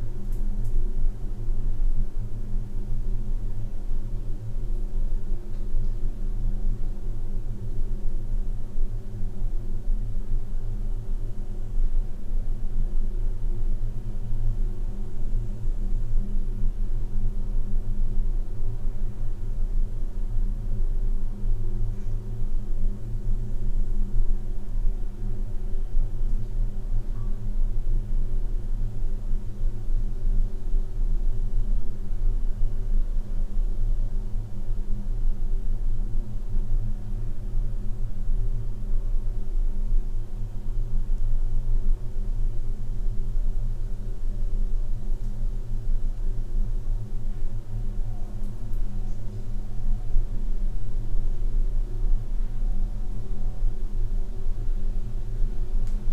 microphones in the (abandoned) well